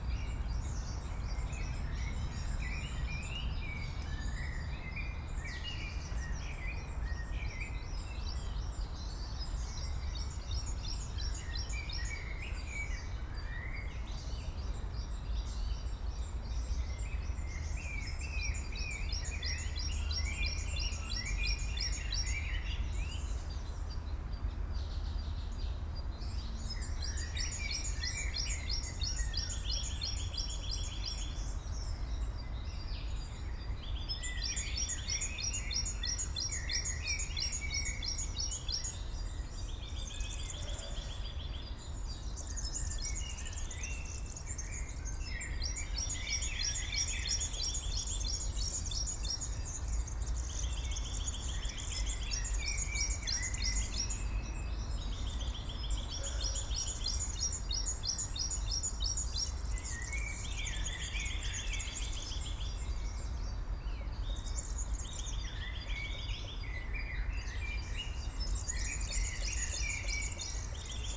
Cemetery Friedhof Columbiadamm, nearby Sehitlik mosque, park ambience in early spring, some birds: tits, woodpecker, various finches, crows, pidgeon, eurasian nuthatch etc., parks, gardens, waste lands, cemeteries have become important places for biodiversity
(SD702, DPA4060)
Berlin, Germany, 23 March, 11:15